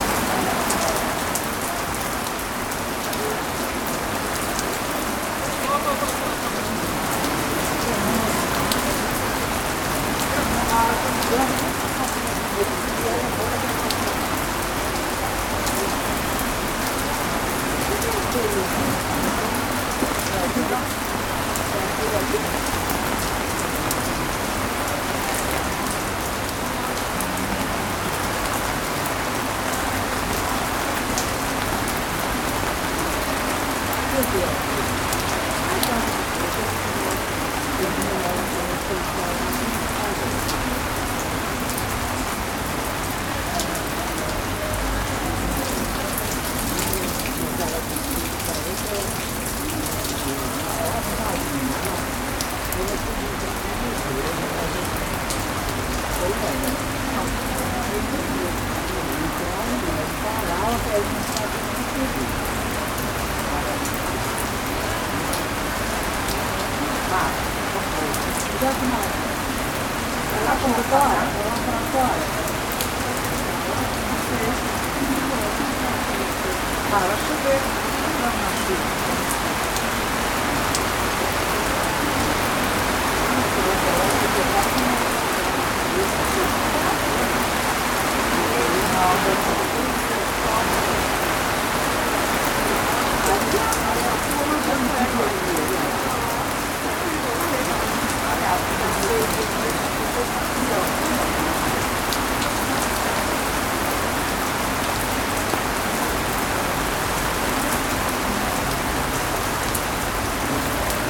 Zadar, Gradska uprava zadar, Kroatien - Rain
Strong rain and snippets of conversations